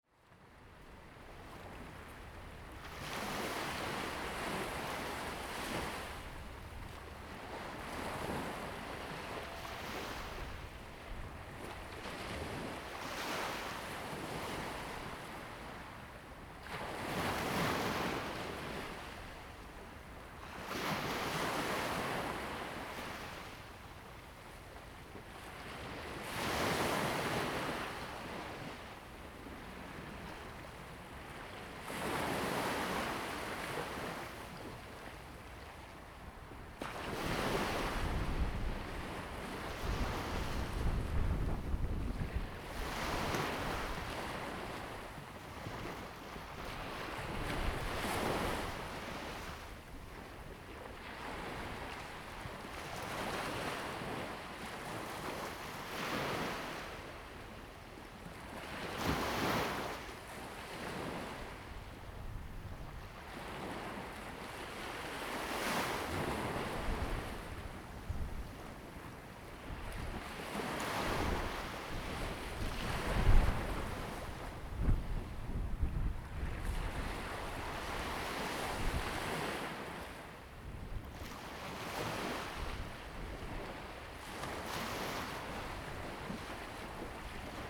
湖下海堤, Jinning Township - the waves and wind
sound of the waves, Crowing sound
Zoom H2n MS+XY